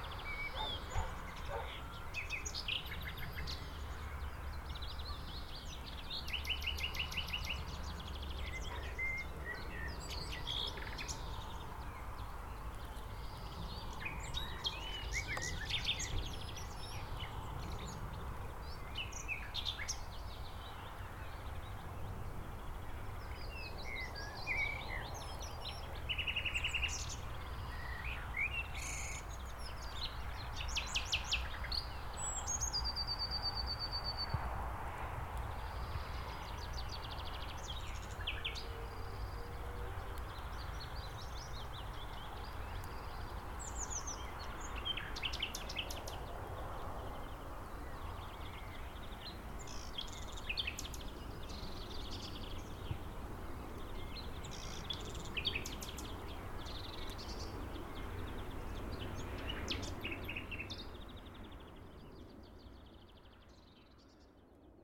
Piste cyclable, Seyssel, France - Premier rossignol
A la sortie de Seyssel sur la piste cyclable près du Rhône arrêt pour écouter mon premier rossignol de l'année.
17 April, 17:15